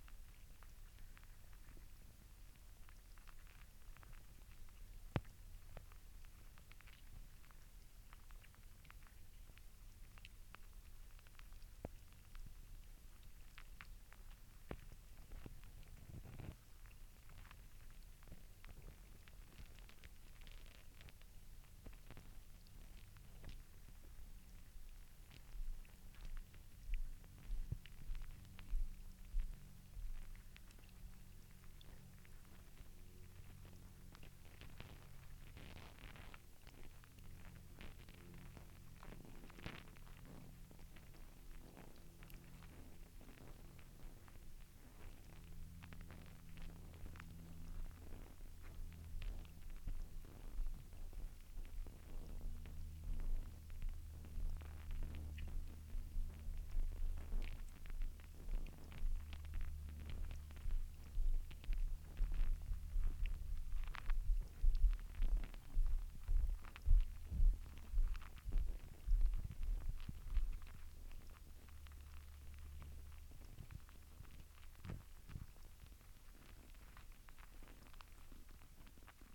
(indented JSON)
{
  "title": "Spankerenseweg, Leuvenheim, Netherlands - Soerensebeek",
  "date": "2020-05-05 14:29:00",
  "description": "2x Hydrophones underwater. Water stuff, footsteps and aeroplane.",
  "latitude": "52.07",
  "longitude": "6.12",
  "altitude": "10",
  "timezone": "Europe/Amsterdam"
}